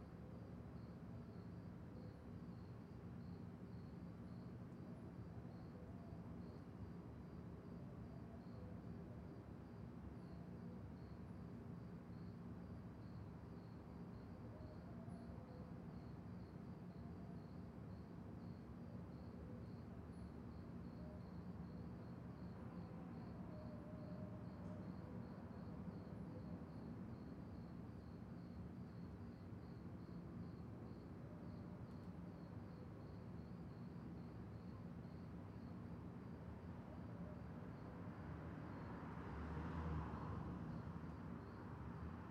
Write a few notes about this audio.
i a way these morning prayers represent soundmarks that are to be listened to at regular daily times all over the city. especially early in the morning there it is a good moment to choose to listen to the sound of the city, where the cultural and achitectural soundscape lighten up audibly the surrounding whereIn the soundlevel of traffic yet is pretty low... this recording was made at 03:30 in the morning and the prayers started at 03:34 ( 2 X neumann KM184 + sounddevice 722 - AB)